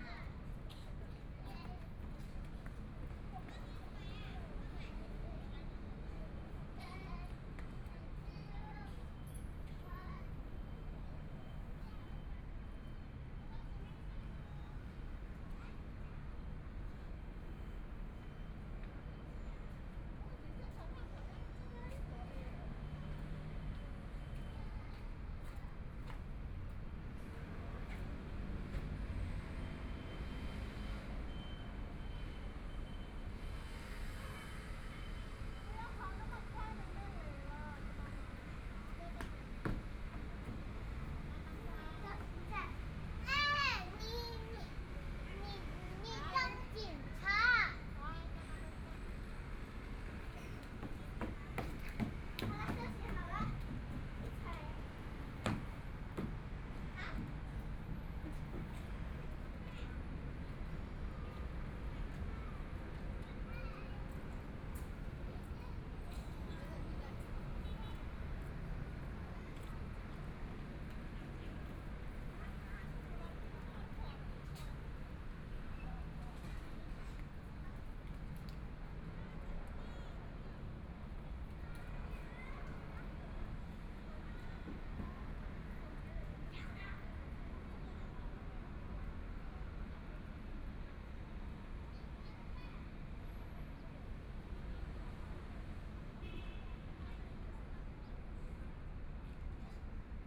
YiTong Park, Taipei - Sitting in the park
Children and adults, Environmental sounds, Motorcycle sound, Traffic Sound, Binaural recordings, Zoom H4n+ Soundman OKM II